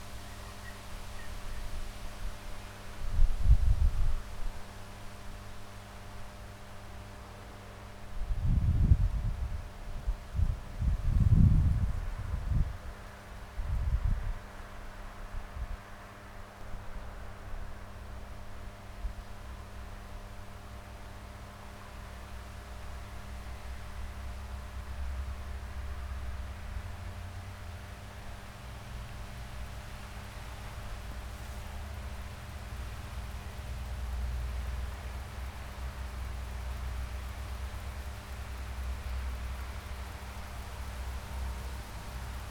Srem, near hospital - transformer chamber among bushes
recorder near a transformer chamber. i like the blend between the straight forward, symmetric buzz of the transformer and the eclectic, unidirectional chirp of nature and hissing wind
Gmina Śrem, Poland, 12 August 2012